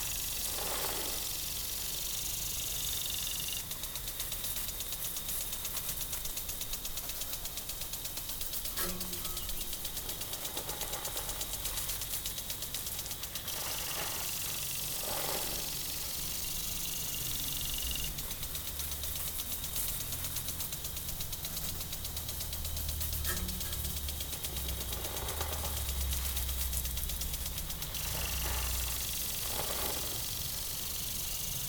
alto, water sprinkle system
watersprinkle system activated automatically in the morning time
soundmap international: social ambiences/ listen to the people in & outdoor topographic field recordings